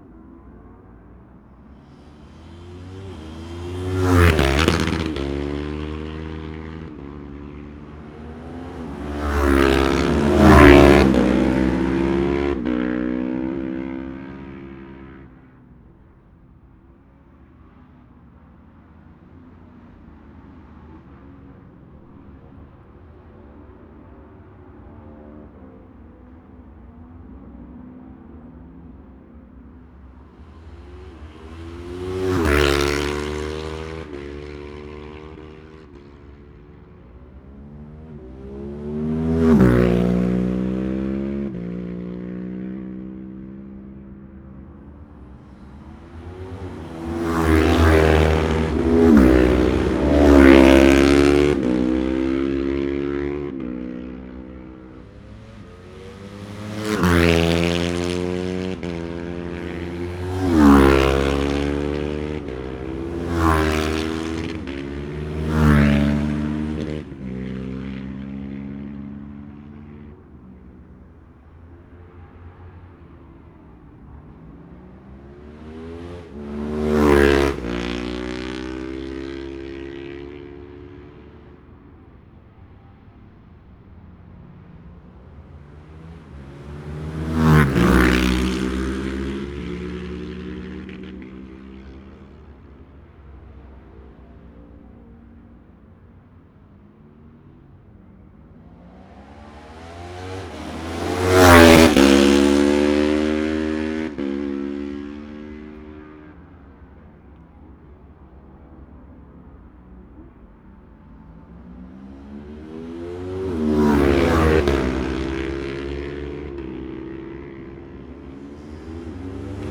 Jacksons Ln, Scarborough, UK - Gold Cup 2020 ...
Gold Cup 2020 ... new comers practice and twins practice ... Memorial Out ... Olympus LS14 integral mics ...
September 2020